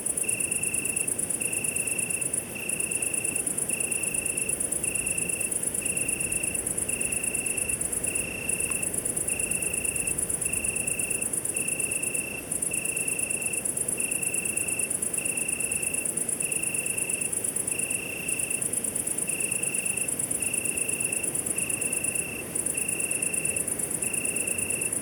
Prés de la Molière, Saint-Jean-du-Gard, France - Quiet Night in the Cevennes National Park - part 1
Quiet night and crickets at Saint Jean du Gard in the Cevennes National Park.
Set Up: Tascam DR100MK3/ Lom Usi Pro mics in ORTF.
Occitanie, France métropolitaine, France